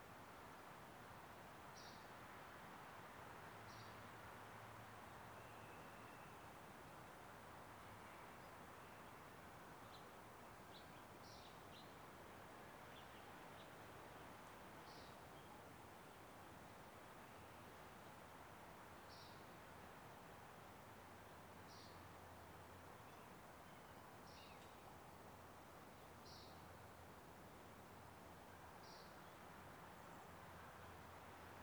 Sherwood Forest - Wind in the Trees
On a cloudless clear blue day, wind high in the trees creates a wash of white noise. It's a precursor of change -- by the time the recording is finished the sky is clouded over and threatening to rain.
Major elements:
* Nothing happens. And yet...